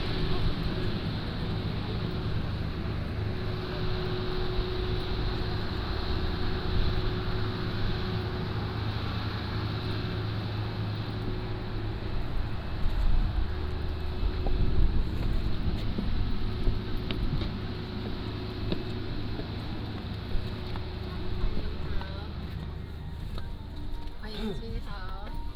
Beigan Airport, Taiwan - At the airport
At the airport, Go into the cabin